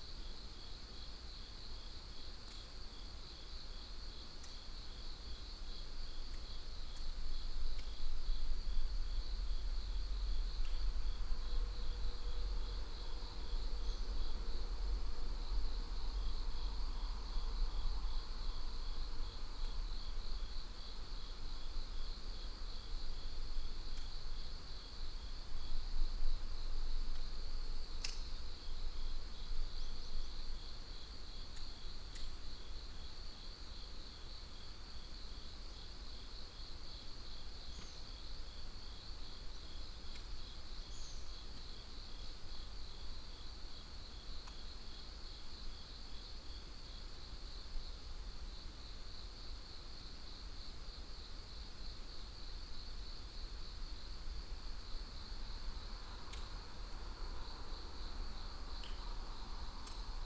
Daybreak visit to a decommissioned train tunnel outside Chuncheon...single track, 150 meter length section of tunnel, slight curve...fairly low resonance inside the tunnel, some interior sounds as well as sound entering from two ends...
강원도, 대한민국, 11 September, 06:10